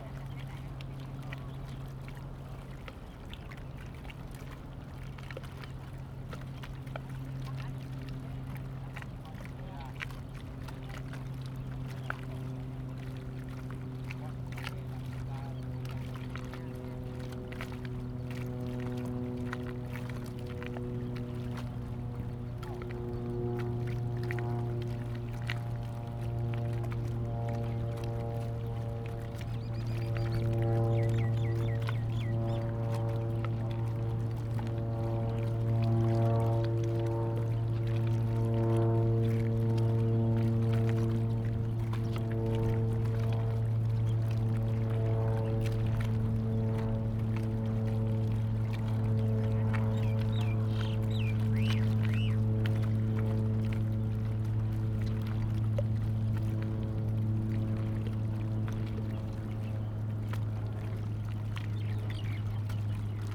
興達港遊艇碼頭, Qieding Dist., Kaohsiung City - At the marina
At the marina, Plane flying through, Bird call, Sound of the waves
Zoom H2n MS+XY
Jiading District, Kaohsiung City, Taiwan, 7 May 2018